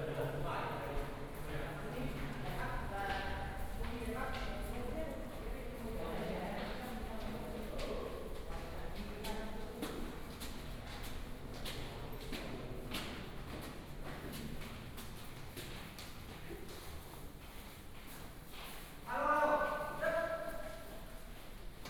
Houli Station, 台中市后里區 - To the station platform
To the station platform direction, Footsteps, underground tunnel
22 January 2017, 11:13